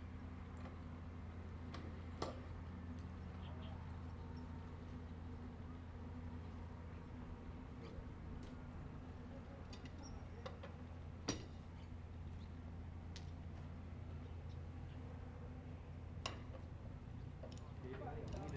大福漁港, Hsiao Liouciou Island - In Port
In the fishing port
Zoom H2n MS +XY